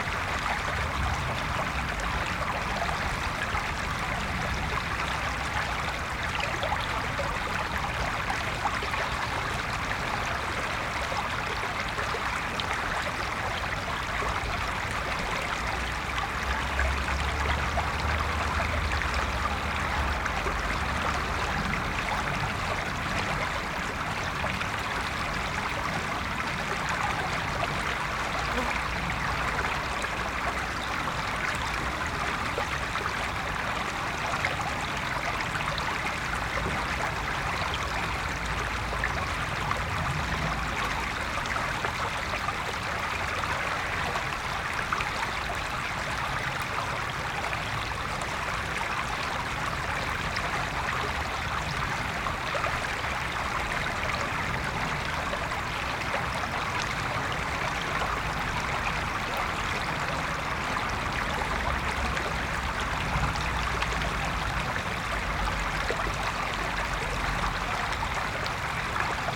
Chemin du pont qui bruit, Montluel, France - The Sereine river
Water flow, distant train.
Tech Note : Sony PCM-M10 internal microphones.